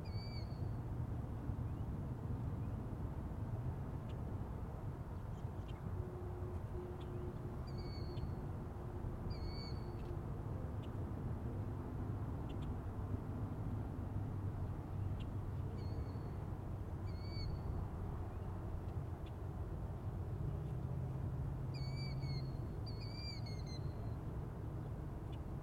McCausland Ave, St. Louis, Missouri, USA - Old Route 66
On bank of River Des Peres Channel near Old Route 66